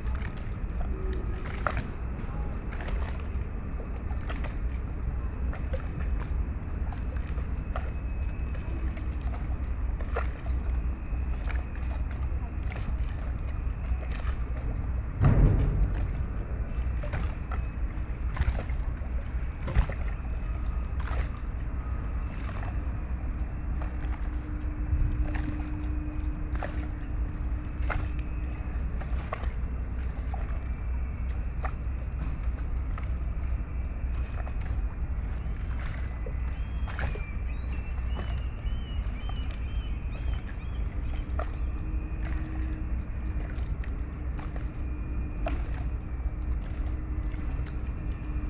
Berlin, Plänterwald, Spree - Sunday soundscape
place revisited, Sunday noon, warm winer day, feels almost like spring. Cola freighther shunting, sound of rusty ferris wheel in abandoned funfair behind.
(SD702, MKH8020 AB50)
7 February 2016, ~13:00